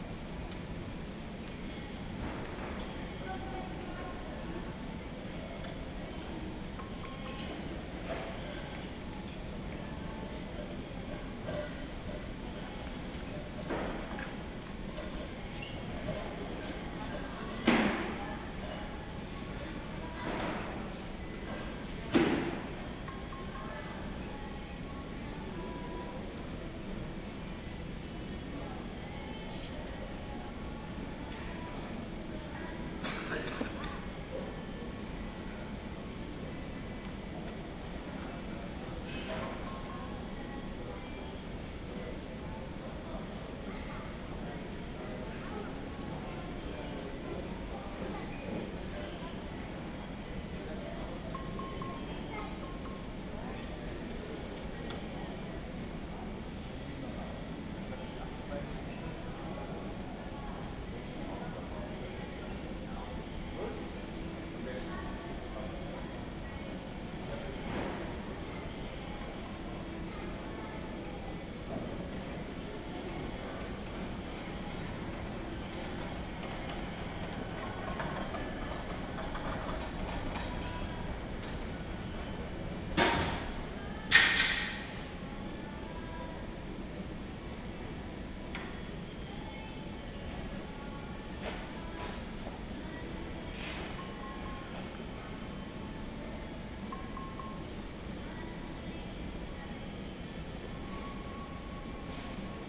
several touch screens for product browsing, it seems as if someone is knocking on the inside of the screen
the city, the country & me: june 16, 2010
Berlin, Germany, 16 June 2010